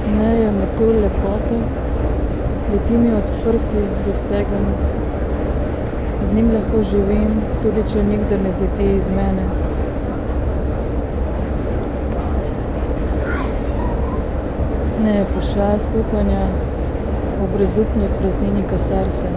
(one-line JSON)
{"title": "S-bahn Neukölln, Berlin, Germany - reading Pier Paolo Pasolini", "date": "2015-09-04 21:59:00", "description": "reading poem Pošast ali Metulj? (Mostru o pavea?) by Pier Paolo Pasolini\nSonopoetic paths Berlin", "latitude": "52.47", "longitude": "13.44", "altitude": "39", "timezone": "Europe/Berlin"}